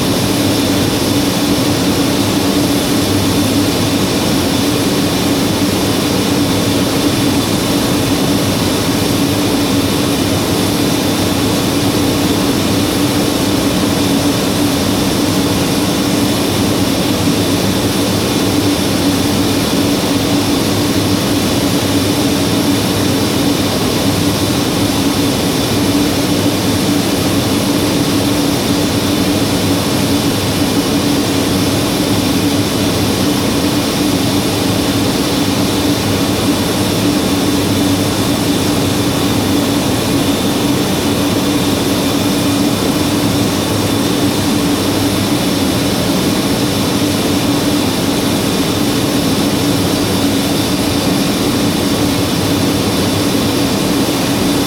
stolzembourg, SEO, hydroelectric powerplant, turbine
Inside the under earth tunnel of the SEO hydroelectric power plant named: Kaverne. The sound of a working turbine.
Thanks to SEO engineer Mr. Schuhmacher for his kind support.
Stolzemburg, SEO, Wasserkraftwerk, Turbine
Im unterirdischen Tunnel des SEO-Kraftwerks mit dem Namen: Kaverne. Das Geräusch von einer arbeitenden Turbine.
Dank an den SEO-Techniker Herrn Schuhmacher für seine freundliche Unterstützung.
Stolzemburg, SEO, centrale hydraulique, turbine
Dans le tunnel souterrain de la centrale SEO que l’on appelle : la caverne. Le bruit d’une turbine qui tourne.
Merci à M. Schuhmacher, le technicien de SEO pour son aimable soutien.